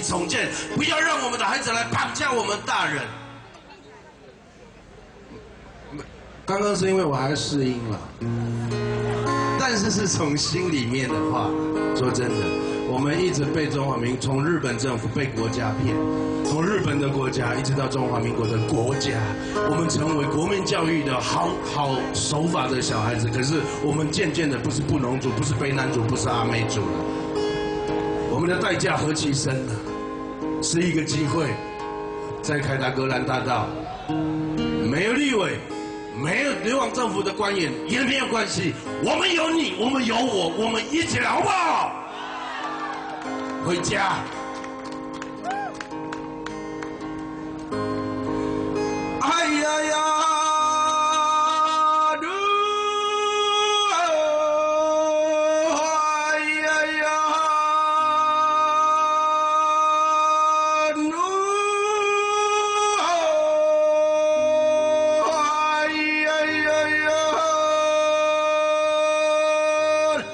Ketagalan Boulevard, Taipei - Protest
Ketagalan BoulevardTaiwan aborigines protest, Aboriginal singer, Sony ECM-MS907, Sony Hi-MD MZ-RH1